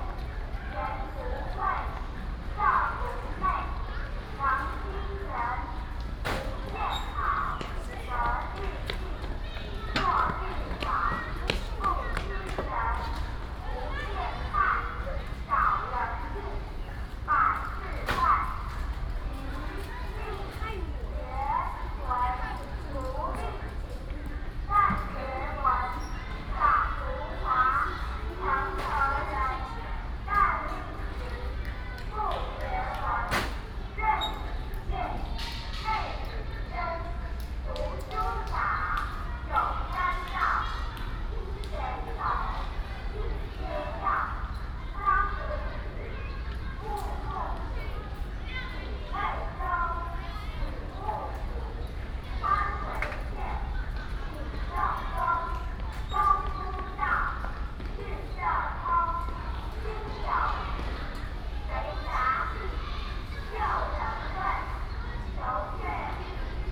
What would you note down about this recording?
In Elementary School, Cleaning time ㄝ